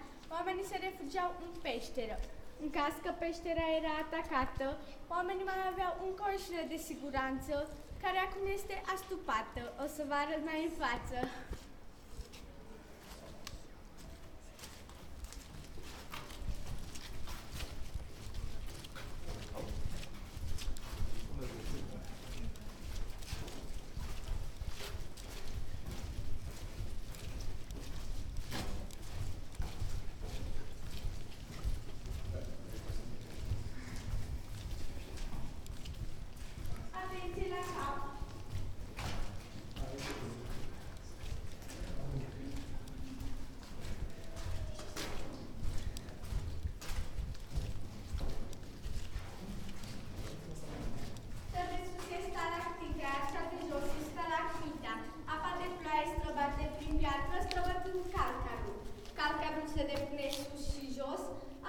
Dambovicioara Cave, Romania
Visit to the Dambovicioara Cave, led by a 14 yr old girl.